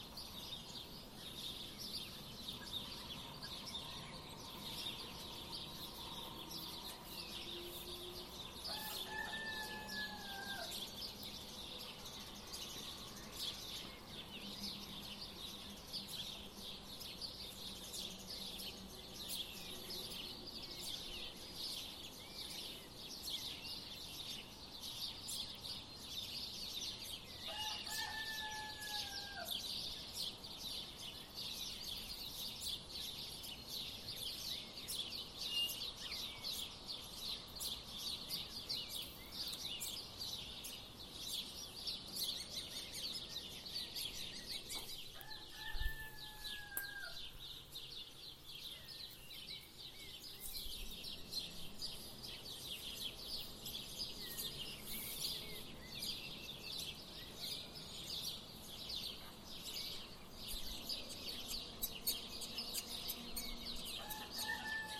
Alois-Geißler-Straße, Köflach, Österreich - The noise in the flower meadow.
The noise in the flower meadow.
Voitsberg, Steiermark, Österreich, 28 April